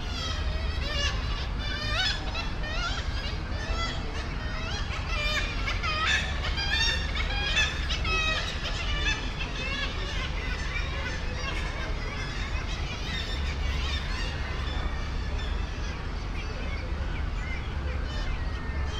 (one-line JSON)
{"title": "St Nicholas Cliff, Scarborough, UK - kittiwakes at the grand hotel ...", "date": "2019-07-25 10:12:00", "description": "kittiwakes at the grand hotel ... SASS ... bird calls ... herring gull ... background noise ... voices ... footfall ... traffic ... boats leaving the harbour ... air conditioning units ... almost a month since the last visit ... the ledges etc are very cramped now ... the young are almost as big as the adults ... many are enthusiastic wing flappers exercising their wings ...", "latitude": "54.28", "longitude": "-0.40", "altitude": "36", "timezone": "Europe/London"}